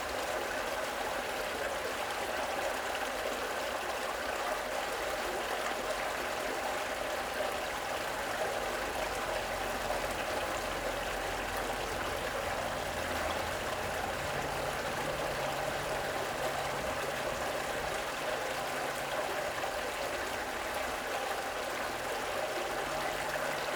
The presence of the underground stream flowing underground can be hear occasional through drain grills in the road. It's path can be followed by ear by listening out for such clues.
Praha, Česko